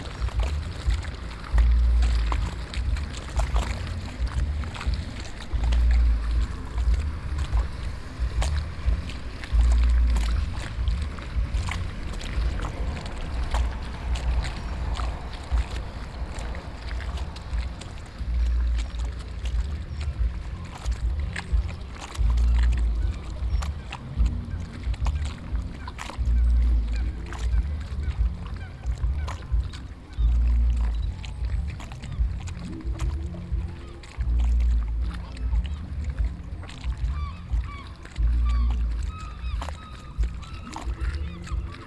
bootjes op de Rijn, water, muziek
langsvarende bootjes
boats on the river, low bass music traveling over the water
3 September 2011, 17:15